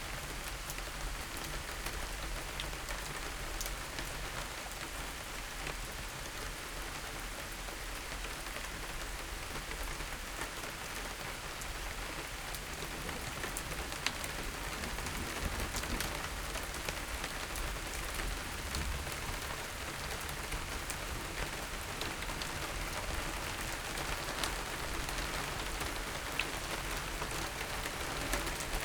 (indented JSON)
{
  "title": "Letschin, Bahnhof - train shelter, rain",
  "date": "2015-08-30 17:00:00",
  "description": "Letschin, Bahnhof, station, waiting for the train, listening to the rain\n(Sony PCM D50, DPA4060)",
  "latitude": "52.63",
  "longitude": "14.35",
  "altitude": "7",
  "timezone": "Europe/Berlin"
}